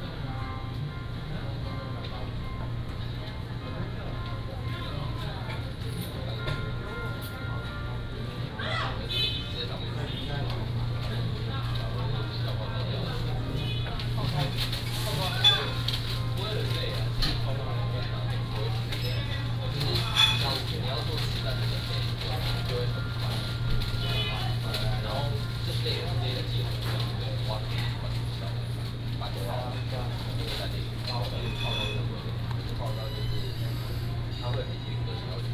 Beigan Township, Taiwan - In the convenience-store
In the convenience-store
馬祖列島 (Lienchiang), 福建省 (Fujian), Mainland - Taiwan Border